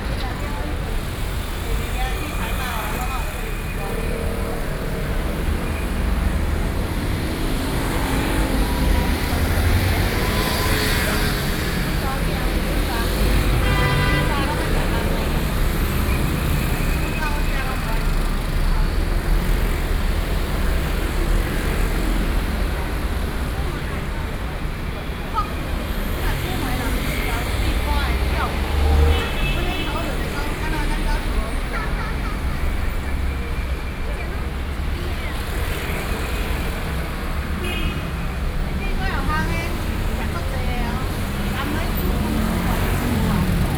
Jing’an Rd., Zhonghe Dist., New Taipei City - Noisy street
walking in the Noisy street, Sony PCM D50 + Soundman OKM II
29 September 2012, 14:13